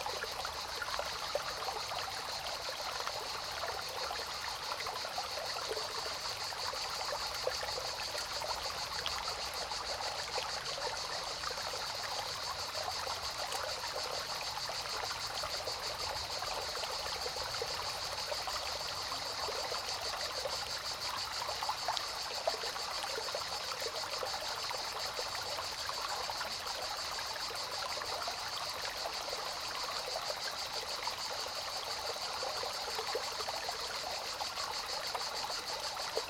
Unnamed Road, Piedralaves, Ávila, España - El fluir del Río Escorial o Garganta de Valdetejo
En un fin de semana de retiro en una finca cercana... fuimos a dar un paseito hasta un afluente del Río Tiétar; el Río Escorial o también llamado Garganta de Valdetejo. No había nadie y pude sentarme en unas rocas en medio del río a grabar. No es muy hondo y se podía escuchar el fluir del agua cristalina... Chicharras... Naturaleza... Agua... y yo :)